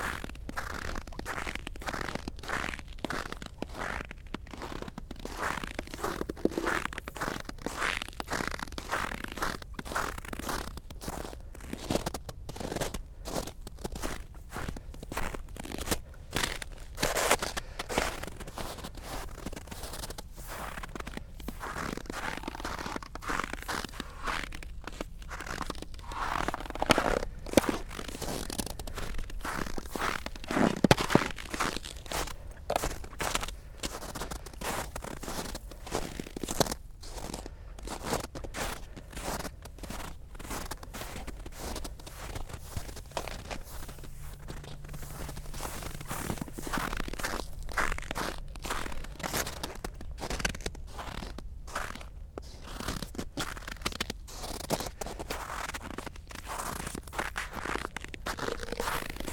walking on the frozen sea, Parnu Bay
great feeling of walking on frozen waves when its -20C outside